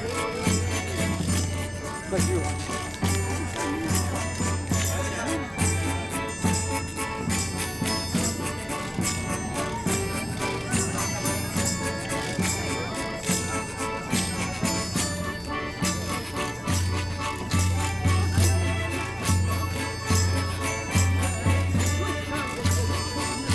folk singers, agricultural initiative gives bags full of apples to people
Oporto, Portugal, 16 October, ~14:00